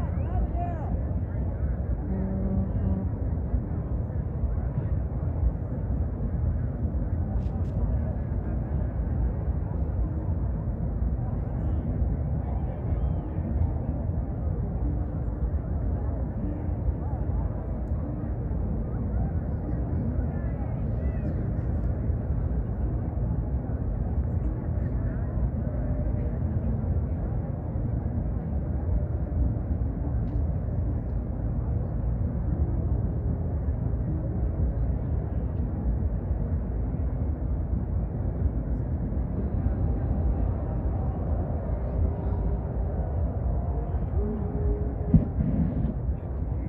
Black Rock City, Nevada, USA - Temple of Direction Burn
Perspective inside the safety perimeter at the extremely hot burning of the Temple of Direction at the culmination of the Burning Man event 2019. Recorded in ambisonic B Format on a Twirling 720 Lite mic and Samsung S9 android smartphone, downmixed into binaural